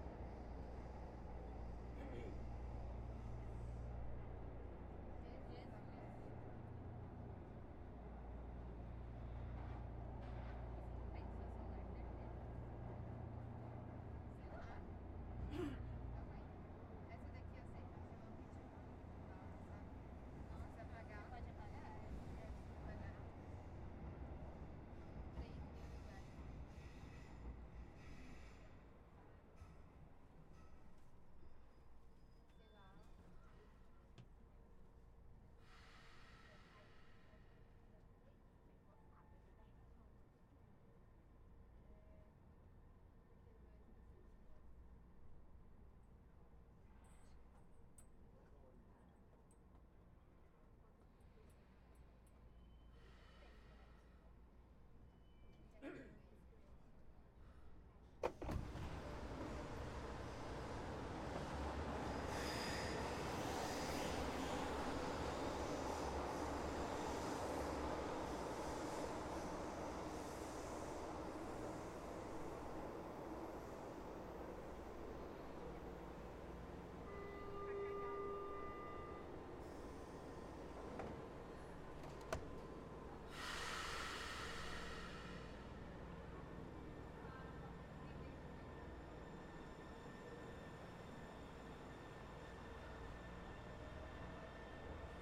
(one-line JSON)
{"title": "Praça da Sé - Sé, São Paulo - SP, 01016-040, Brasil - Estação da Sé", "date": "2019-05-03 16:24:00", "description": "Gravamos dentro de um vagão da estação da sé, próximo ao horário de pico.", "latitude": "-23.55", "longitude": "-46.63", "altitude": "769", "timezone": "America/Sao_Paulo"}